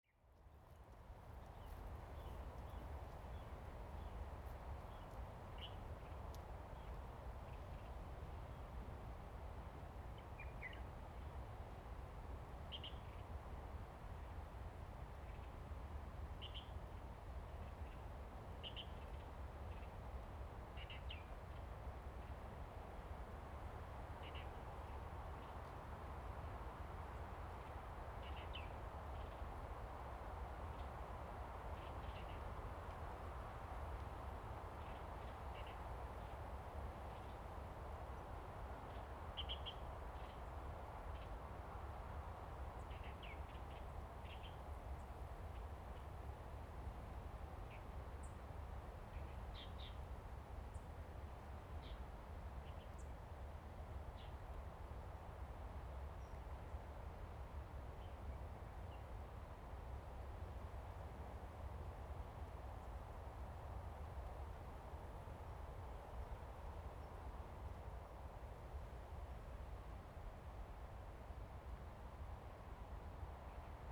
貓公石海濱公園, Lieyu Township - Wind and waves
Birds singing, In the woods, Wind and waves
Zoom H2n MS +XY